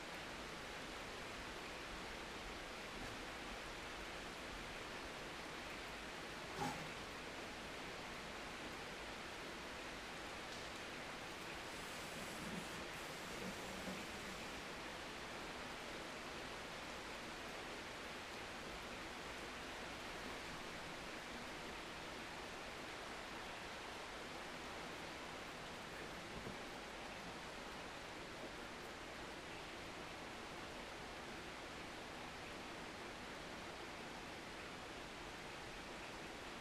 Cologne, Heavy Rain
Rain falling on trees in an inner court yard
Cologne, Germany, 21 July 2009